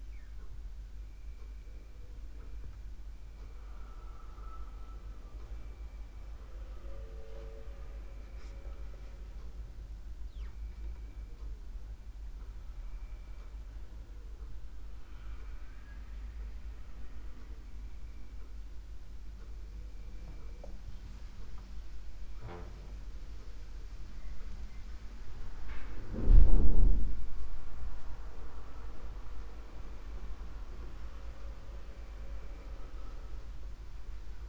quiet doors, and cricket, getting more distant and silent with nearby autumn